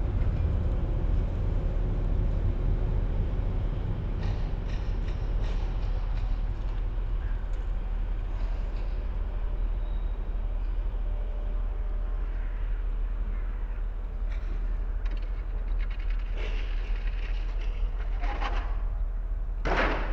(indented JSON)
{
  "title": "Beermannstr., Berlin, Deutschland - preparation for demolition",
  "date": "2015-03-25 10:40:00",
  "description": "trees, ponds, gardens and allotments have vanished. workers prepare a house for demolition. the space is required by the planned motorway / Autobahn A100.\n(Sony PCM D50, DPA4060)",
  "latitude": "52.49",
  "longitude": "13.46",
  "altitude": "39",
  "timezone": "Europe/Berlin"
}